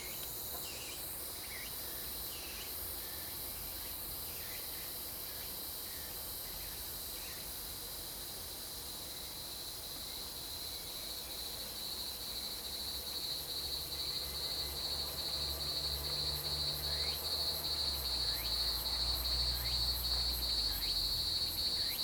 Woody House, 桃米里 Puli Township, Nantou County - Cicadas cry and Birds singing
Cicadas cry, Birds singing
Zoom H2n MS+XY